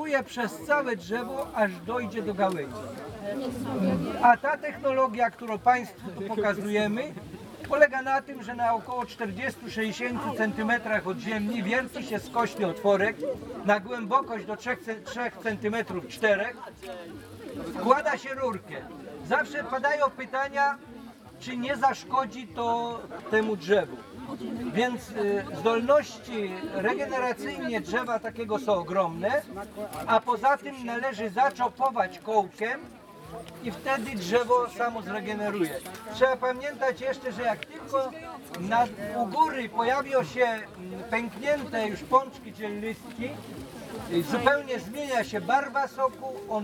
Poczopek, Silvarium, Polska - Opowieść o soku brzozowym
województwo podlaskie, Polska, European Union, 20 April 2013